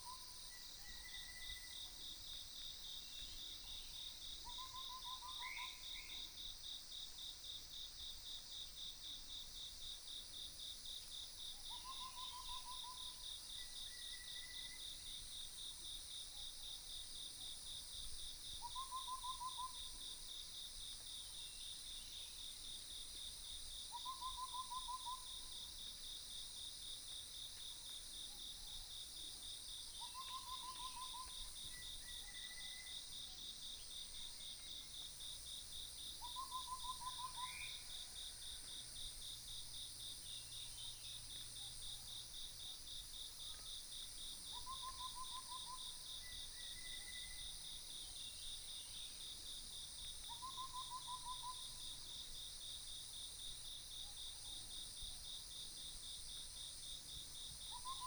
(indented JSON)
{
  "title": "中路坑, 埔里鎮桃米里 - Bird calls",
  "date": "2015-06-14 06:58:00",
  "description": "Early morning, Bird calls, Croak sounds, Dog chirping",
  "latitude": "23.95",
  "longitude": "120.92",
  "altitude": "525",
  "timezone": "Asia/Taipei"
}